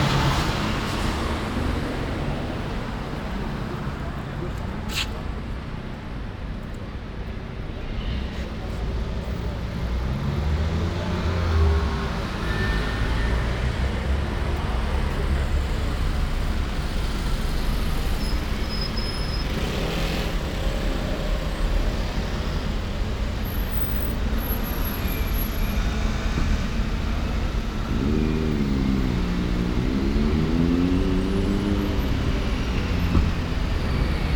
"Tuesday afternoon soundwalk in Paris in the time of COVID19": Soundwalk
Tuesday, October 13th 2020: Paris is scarlett zone fore COVID-19 pandemic.
Round trip walking from airbnb flat to Gare du Nord and back.
Start at:3:24 p.m. end at 4:24 p.m. duration 59’53”
As binaural recording is suggested headphones listening.
Both paths are associated with synchronized GPS track recorded in the (kmz, kml, gpx) files downloadable here:
For same set of recordings go to:
France métropolitaine, France, 13 October 2020